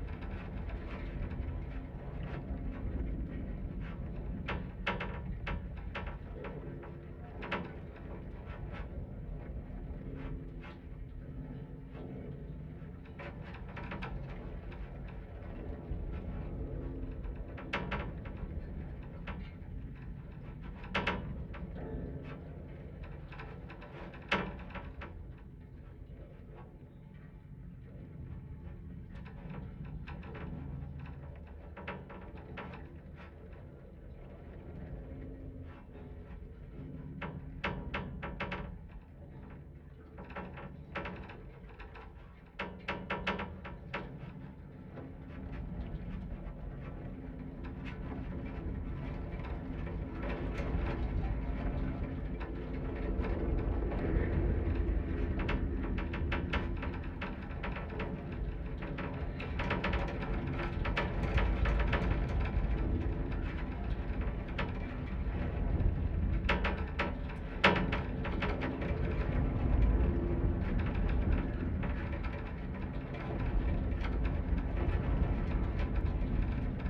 This recording uses two contact microphones to pick up the vibrations made by the wind passing over a metal wire fence. The weather wasn't overly windy, but enough to have an effect on the object. I used two Jrf contact microphones a Sound Devices Mixpre-D and a Tascam DR-100 to make my recording.